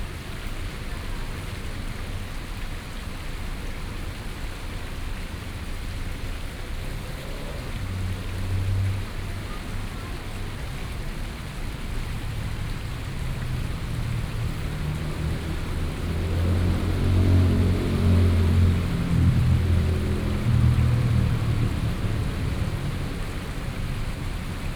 Sec., Ren’ai Rd., Da’an Dist., Taipei City - Small stream pool
Traffic Sound, Roadside small square
Da’an District, Taipei City, Taiwan, 24 July 2015, 13:46